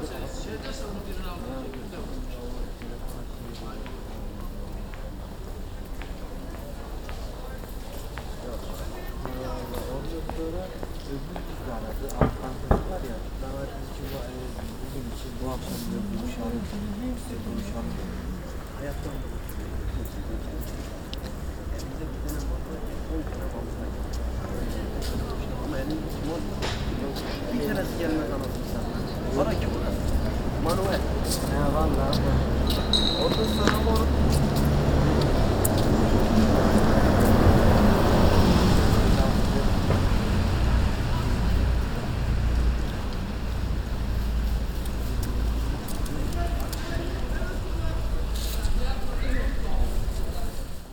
Der Bus. Große Bergstraße. 31.10.2009 - Große Bergstraße/Möbelhaus Moorfleet
Gemüseladen-Kräuter Kühne 21
31 October 2009, ~16:00